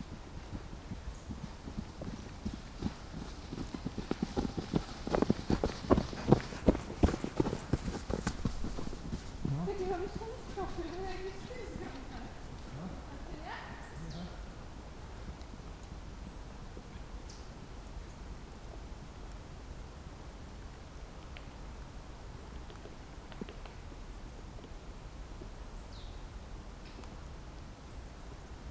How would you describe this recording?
an open space in the forest. winter ambience, a pair of joggers passing by (sony d50)